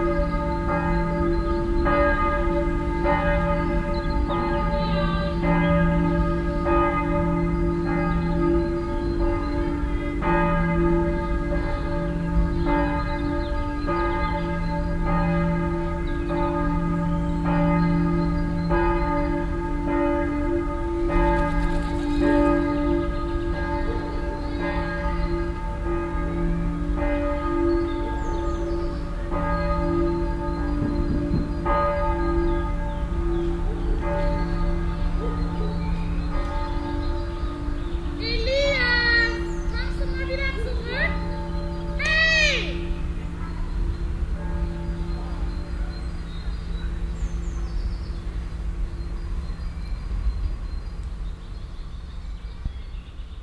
cologne stadtgarten, kinderspielplatz platz - cologne, stadtgarten, kinderspielplatz platz 2
klang raum garten - field recordings